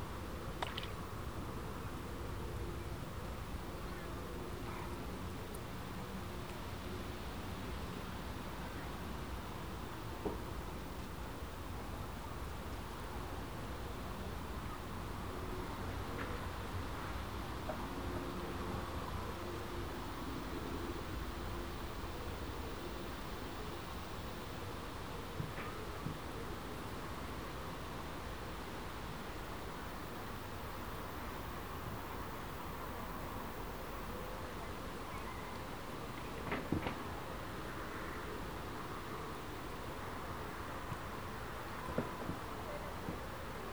Riverside meditation on the banks of the Thames at South Stoke on a sunny Tuesday afternoon. The wind rustling the bushes overhanging the river is layered with the sounds of pleasure boats and trains passing by, aircraft from nearby RAF Benson and Chiltern Aerodrome, and people relaxing in the gardens of the properties on the opposite side of the river. Recorded on a Tascam DR-40 using the on-board microphones (coincident pair) and windshield.
United Kingdom, 15 August 2017, ~16:00